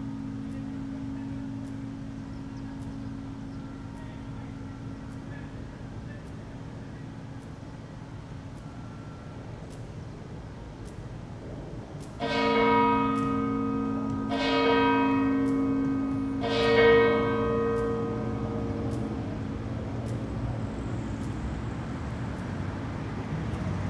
Laurier-Est, Montreal, QC, Canada - Église Saint-Stanislas de Kostka
Recording of Église Saint-Stanislas de Kostka's bells and around traffic at noon.